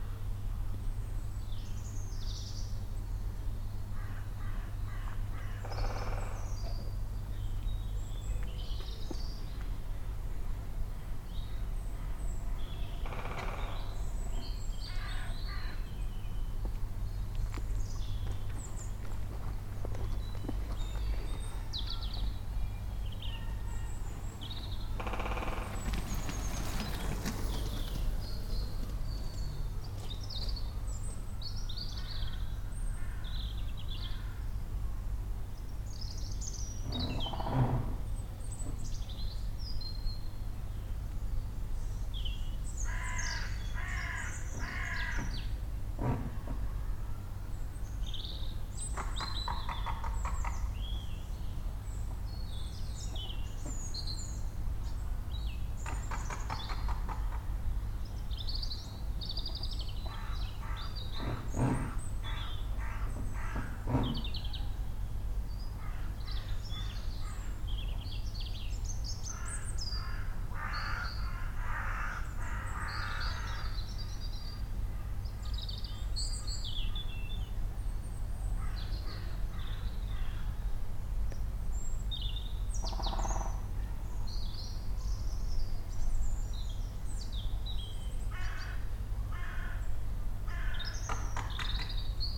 I was on my way to work when I noticed the sound of a Woodpecker up in the trees above the high wall to my left. Leant against the wall and listened to the woodpecker, then noticed that on the other side of the path, beyond the fence, someone was doing some sort of DIY. So you can hear on the one side the woodpecker and on the other side of the path, occasional hammerings and saw-rippings. You can also hear distantly the traffic of London Road; Crows; many other birds; and the occasional cyclist or walker using that path. It is a great path and I love walking on it. Often hear Robins, too.